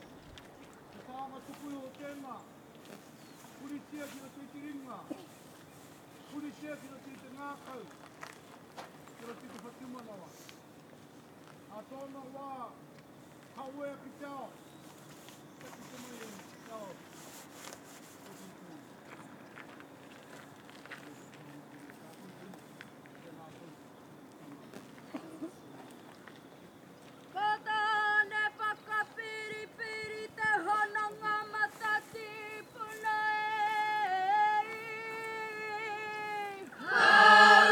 Dawn opening ceremony for the 2016 Harbourview Sculpture Trail
Te Atatu Peninsula, Auckland, New Zealand